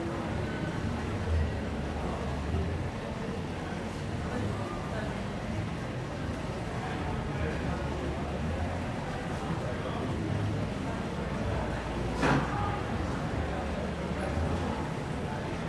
Restaurant La Dédicace, water discharge in the street, crowds at other bars, chimes, Rue Laplace, Paris, France - 10pm bells, bar opposite plays Buena Vista Social Club's "Chan Chan"
France métropolitaine, France, 18 June 2022